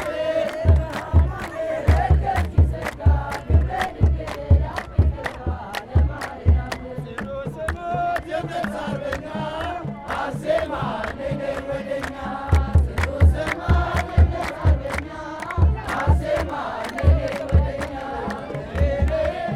Kebena, Addis Ababa, Éthiopie - Timkat celebration
D'habitude, c'est un simple terrain de football sans herbe mais ce lundi matin beaucoup de monde aux vêtements colorés s'est réuni. Les orthodoxes célèbrent Timkat (Epiphanie) depuis la nuit dernière par des prières et des chants. Ce lundi matin, c'est la fin de la cérémonie, des petits groupes se réunissent et chantent. Le premier, de jeunes hommes et femmes jouent successivement du tambour entourés par d'autres femmes et hommes qui chantent a cappella et frappent dans leurs mains. A la fin du son, on entend un autre groupe. Ce sont majoritairement des femmes réunies autour d'un homme qui chante au micro.
Au même moment, à quelques rues plus au Nord, plus de 10.000 personnes (sans doute) sont réunis sur un terrain de foot bien plus grand pour célébrer également Timkat.
Addis Ababa, Ethiopia, January 2015